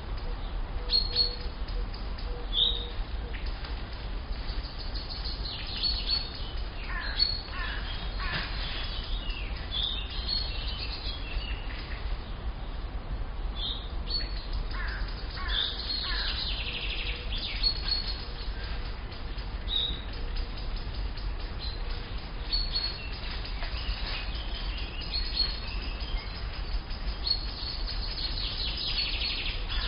Buchet, Deutschland - Waldrand / Edge of the woods
Vögel, Kuh, Traktor. / Birds, cow, tractor.
Buchet, Germany, 10 July 2015, 11:58